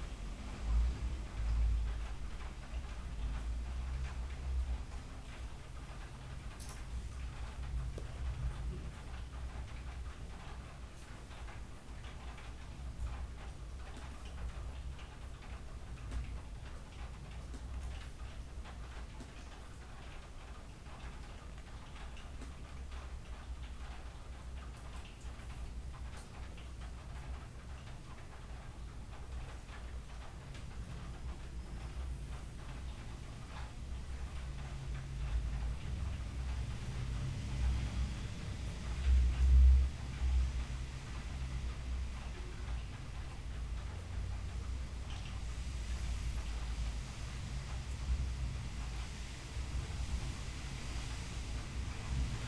Cardener Street, Barcelona, España - Morning rain
Morning rain recorded with binaural mics stuck into a window.